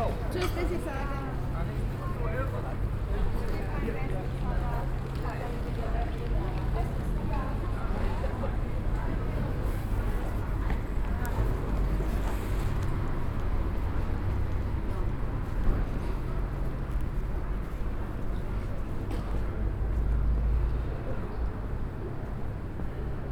Wochenmarkt, Hamm, Germany - Green Market April 2020
walking from West to East along the stalls, starting at the fountain up to beginning of Oststr, ; fewer stalls, fewer shoppers than other wise, every one waiting patiently in queues, chatting along…
i'm placing this recording here for a bit of audio comparison... even though my stroll in April 2020 is taking place a little closer round the church; during Christmas season the green market shifts because of the Christmas Market being set up round the church.